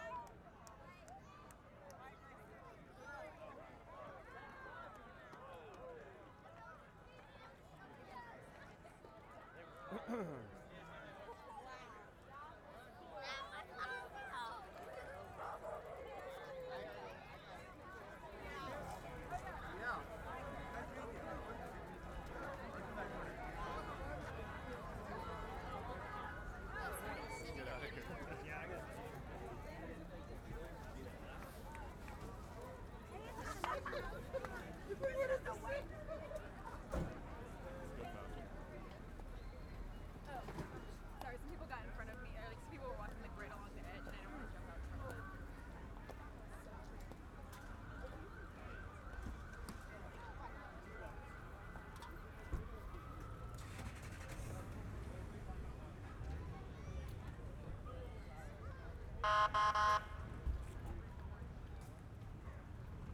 Ben Milam Hotel Demolition, Downtown, Houston, TX, USA - Ben Milam Hotel Demolition
The demolition of a ten story building, constructed near Union Station in 1928 to house traveling salesmen. The implosion was executed to make way for high rise luxury apartments. 400 holes were drilled, 350 lbs. of dynamite was inserted, and...